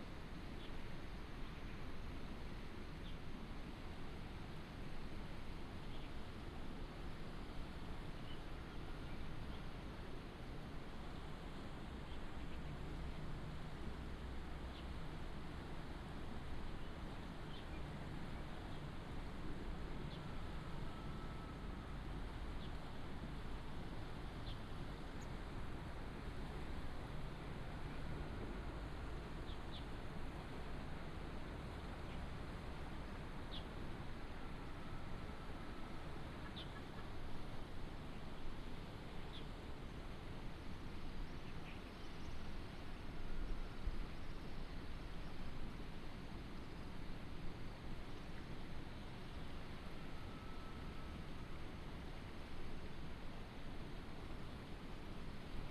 太麻里溪, Xitou, Taimali Township - On the river bank
On the river bank, Chicken crowing, Stream sound, Bird call
Binaural recordings, Sony PCM D100+ Soundman OKM II
Taimali Township, Taitung County, Taiwan